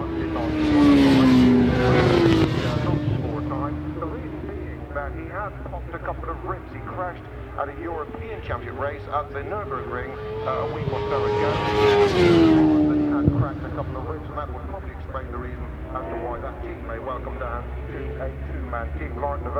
Silverstone Circuit, Towcester, United Kingdom - world endurance championship 2002 ... practice ...
fim world endurance championship 2002 ... practice ... one point stereo mic to minidisk ...